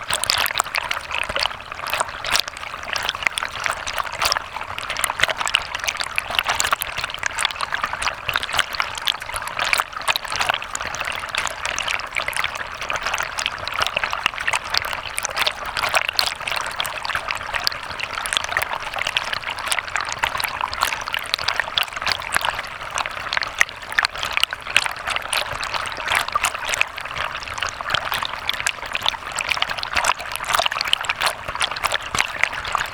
Lihuania, Utena, man-made waterfall(hydrophone recording)
heres artificial waterfall near man made dam...hydrophone at 1 meter depth
8 October 2010, 14:40